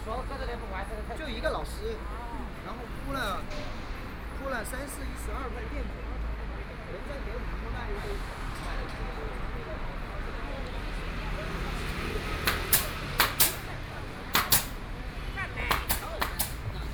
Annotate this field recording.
Walking on the street, Traffic Sound, Binaural recording, Zoom H6+ Soundman OKM II